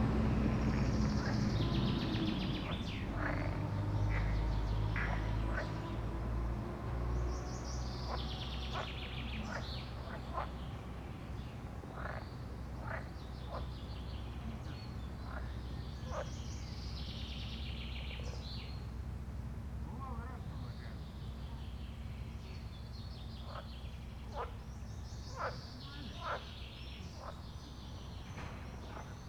Alanta, Lithuania, manor's park soundscape
soundscape of Alanta's manor park: frogs, birds, people....
13 June 2012, ~15:00